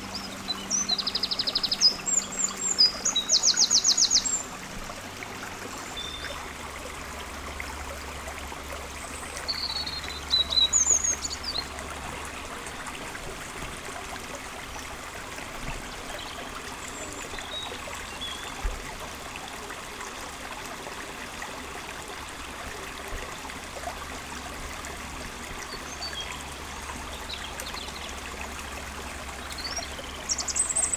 Walhain, Belgique - The river Orne
Recording of the river Orne, in a pastoral scenery. Confluence with the Sart stream. Nervous troglodyte in the trees. Recorded with Lu-Hd binaural microphones.
Walhain, Belgium, 10 April, 16:20